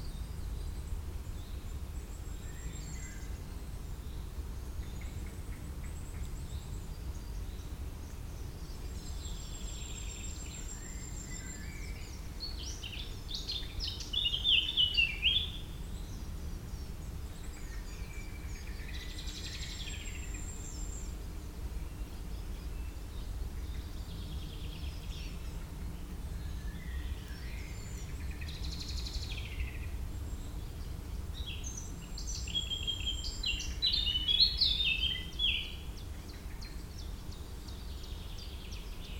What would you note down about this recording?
On a beautiful sunny morning, the Hurtières forest big calm was immediately seductive. This explains why a recorder was left alone, hanged on a beech branch. This quiet recording includes dominant songs of the eurasian blackcap, the short-toed treecreeper and the yellowhammer (tsi-tsi-tsi-tsi-tsi-tih-tuh). More discreet are the common chiffchaff, the common chaffinch, the dunnock, the blackbird. Unfortunately, there's also planes, but this place was like that. Par un beau matin ensoleillé, le grand calme de la forêt des Hurtières s'est immédiatement annoncé séduisant. C'est de la sorte qu'un enregistreur a été laissé seul, accroché à la branche d'un hêtre. Cet apaisant témoignage sonore comporte les chants dominants de la fauvette à tête noire, le grimpereau des jardins et le bruant jaune (tsi-tsi-tsi-tsi-tsi-tih-tuh). De manière plus discrète, on peut entendre le pouillot véloce, le pinson, l'accenteur mouchet, le merle.